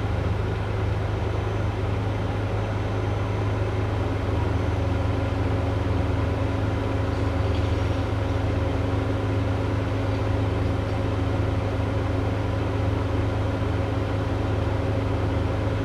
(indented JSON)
{
  "title": "Suffex Green Ln NW, Atlanta, GA, USA - Surprise residential roadwork",
  "date": "2020-06-15 13:20:00",
  "description": "This is a snippet from a nearly hour-long recording of a work crew ripping up pavement right outside my apartment. This residential roadwork was done without notifying any of the residents of the apartment complex. Furthermore, some of the neighbors were angry because they didn't get a chance to move their cars before the work started and the dust and gravel was landing on their vehicles. The work started at around 8:00 in the morning and continued well into the PM. In this section of the recording you can hear jackhammers, trucks, car horns, and other sounds associated with roadwork and heavy machinery. Recorded with the Tascam DR-100MKiii and a custom-made wind reduction system.",
  "latitude": "33.85",
  "longitude": "-84.48",
  "altitude": "299",
  "timezone": "America/New_York"
}